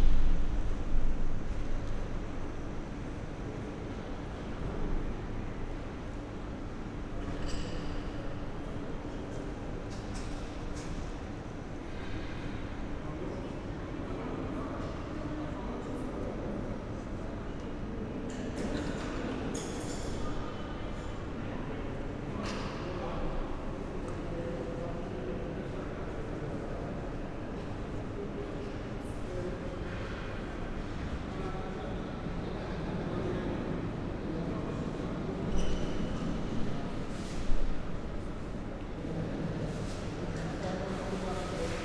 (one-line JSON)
{"title": "Bahnhof, Koblenz, Deutschland - station hall ambience", "date": "2022-05-09 13:35:00", "description": "Koblenz main station, Monday afternoon, hall ambience\n(Sony PCM D50, Primo EM172)", "latitude": "50.35", "longitude": "7.59", "altitude": "77", "timezone": "Europe/Berlin"}